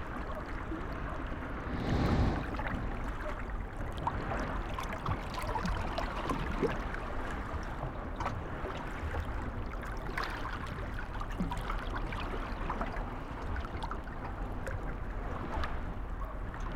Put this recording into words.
Mediterranean sea, lapping on the shore on a calm evening. from the shore people and cars can be heard. Binaural recording. Artificial head microphone set up on some rocks on a breakwater, about 2 meters away from the waterline. Microphone facing north east. Recorded with a Sound Devices 702 field recorder and a modified Crown - SASS setup incorporating two Sennheiser mkh 20 microphones.